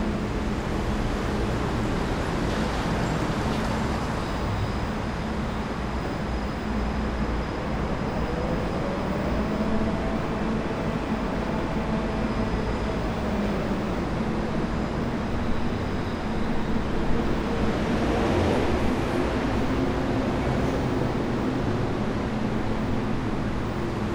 Perugia, Italy - traffic from the minimetro station of fontiveggie
traffic from the station